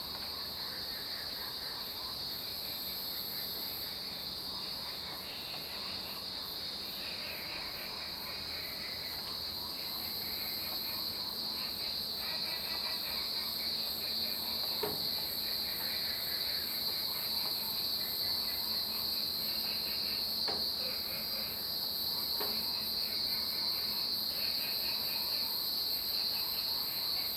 綠屋民宿, 埔里鎮桃米里 - In the morning
Frogs chirping, Cicada sounds, Birds singing.
Zoom H2n MS+XY